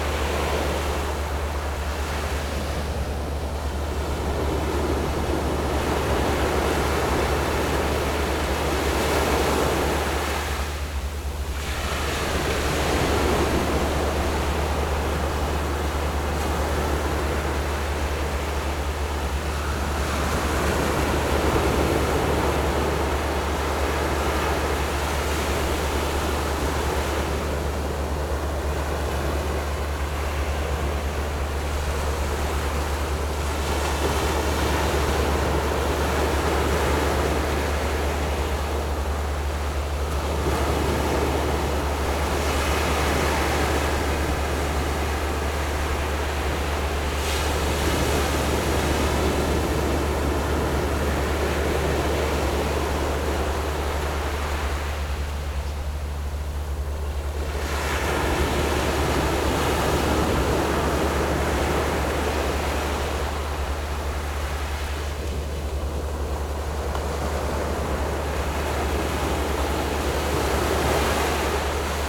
五結鄉季新村, Yilan County - Sound of the waves
Hot weather, In the beach, Sound of the waves
Zoom H6 MS+ Rode NT4
Yilan County, Taiwan, 29 July 2014, 10:53